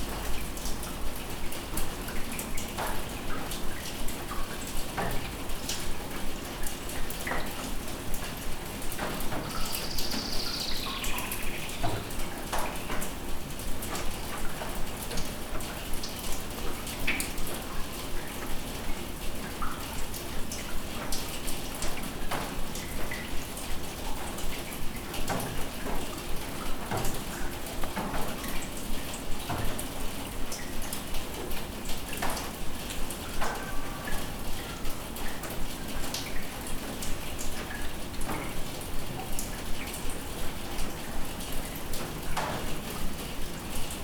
Innstraße, Innsbruck, Österreich - Raindrops in the courtyard
vogelweide, waltherpark, st. Nikolaus, mariahilf, innsbruck, stadtpotentiale 2017, bird lab, mapping waltherpark realities, kulturverein vogelweide, dripping rain from rooftop
Innsbruck, Austria, 6 June 2017, 17:25